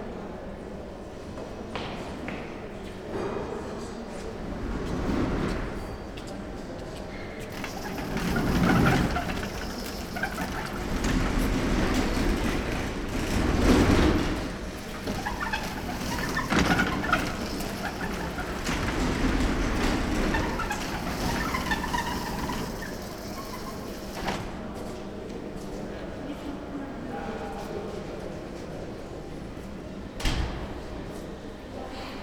berlin, urban hospital - entry hall door
Urbahnkrankenhaus / Urban Hospital
entry hall, squeaking automatic door, people moving in and out
Berlin, Germany, 11 January 2010